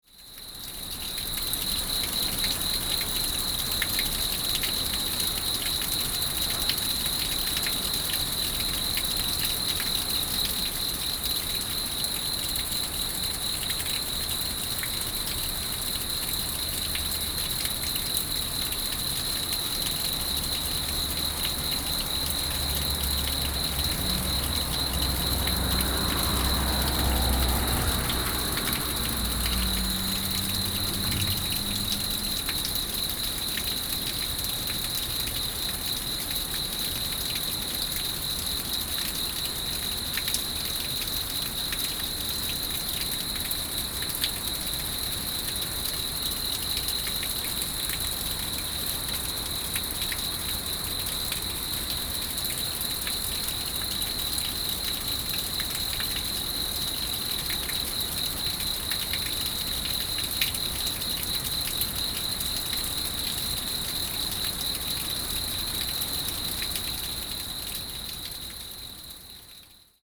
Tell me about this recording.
Cicadas, The sound of water, Sony PCM D50